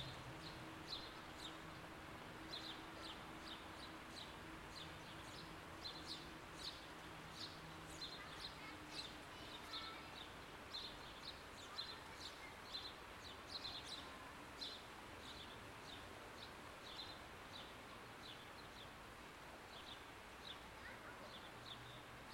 stuttgart, entrance kunstverein
in front of the entrance of the stuttgart kunstverein